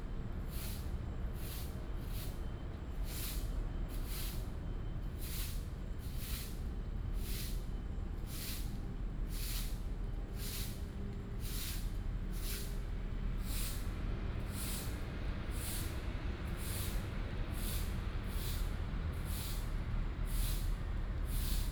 空軍八村, Hsinchu City - Clean the leaves
Clean the leaves, gecko sound, Formerly from the Chinese army moved to Taiwans residence, Binaural recordings, Sony PCM D100+ Soundman OKM II
North District, 北大路136巷19號, September 2017